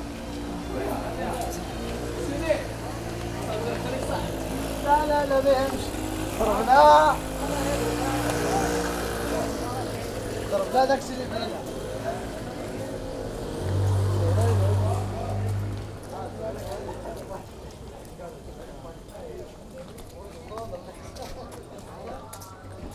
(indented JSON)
{
  "title": "Rue Bab Doukkala, Marrakesch, Marokko - walk along street market",
  "date": "2014-02-25 13:40:00",
  "description": "walk along the street market in Rue Bab Doukkala.\n(Sony D50, DPA4060)",
  "latitude": "31.63",
  "longitude": "-8.00",
  "timezone": "Africa/Casablanca"
}